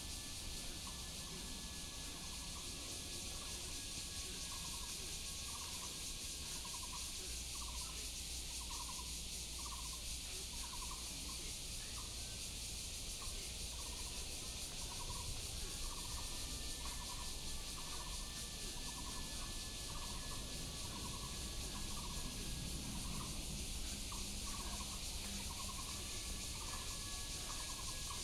Many elderly people doing exercise in the park, Bird calls, Cicadas cry
Da’an District, 台北聯絡線, 17 July 2015